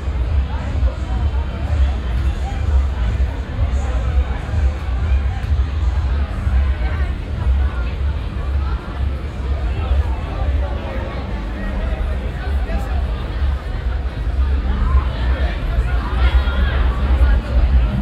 vancouver, granville street, friday night party zone
friday night in downtowns favourite party zone. police cars block the street. big crowd of people all over the street and in rows in front of different clubs.
soundmap international
social ambiences/ listen to the people - in & outdoor nearfield recordings